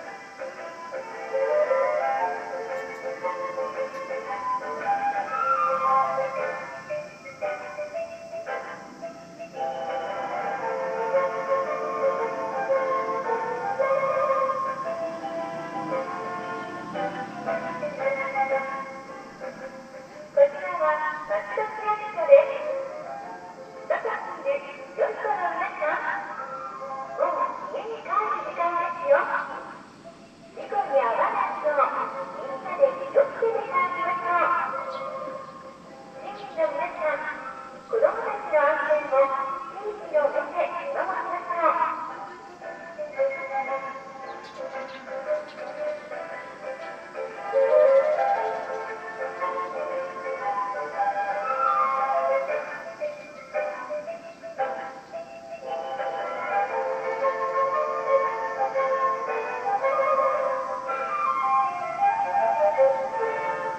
Kitamatsudo, Matsudo, Chiba Prefecture, Japan - 5pm Tannoy announcement
Zoom H2 Digital recorder
5pm announcement from the local city office instructing children to finish play and head home.